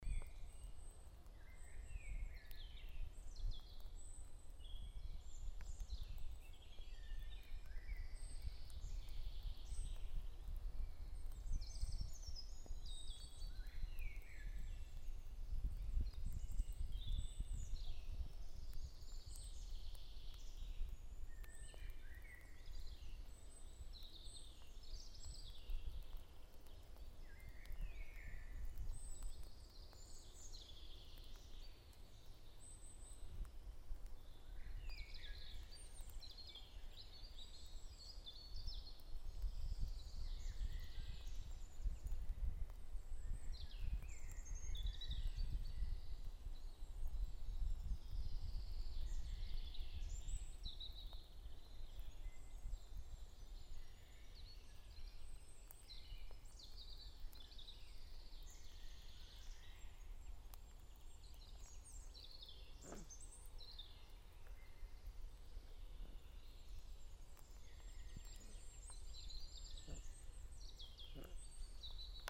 forest after thunderstorm
recorded june 1, 2008 - project: "hasenbrot - a private sound diary"